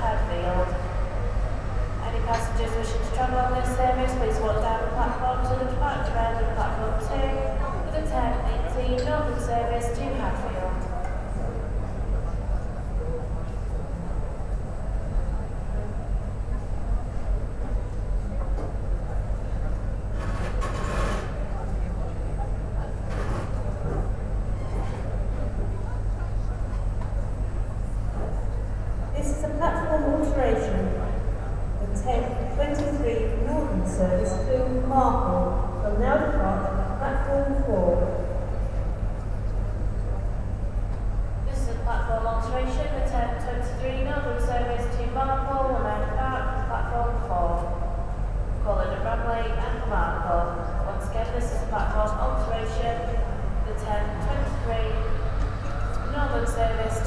A cold Saturday morning, drinking coffee, waiting for train.